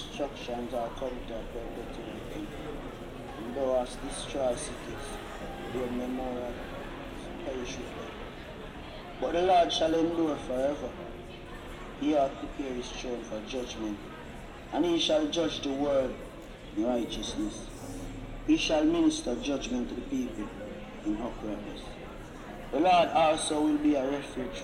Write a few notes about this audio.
A boy from Gambia listens to a Jamaican pentecostal preacher on his boombox